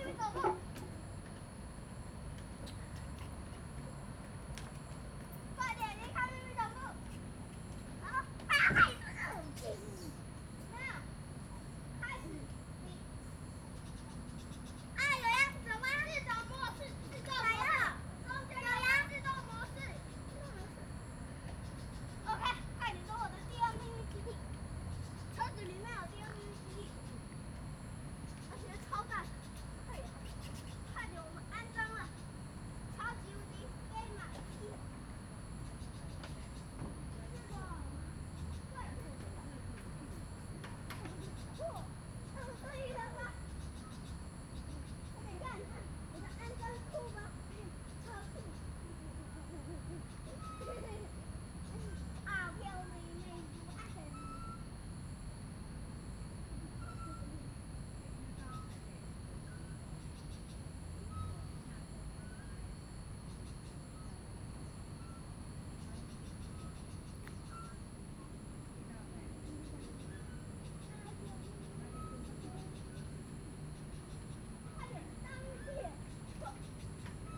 台東森林公園, Taitung City - Children
In the park, Children's play area
Zoom H2n MS+ XY